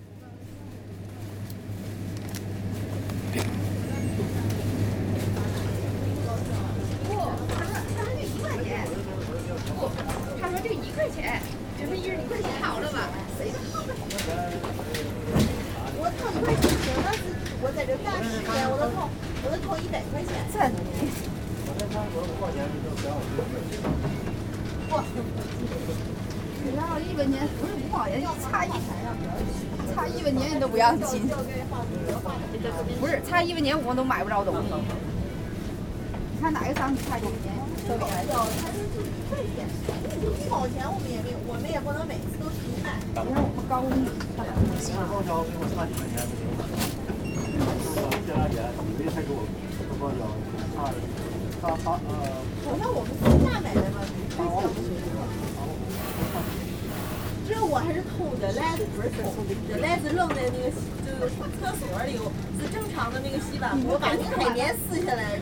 Ottignies-Louvain-la-Neuve, Belgique - In the supermarket
Quietly walking in the supermarket on a saturday afternoon. Japanese or chinese people prepairing sushis ans clients buying bottles.
Ottignies-Louvain-la-Neuve, Belgium, 3 December 2016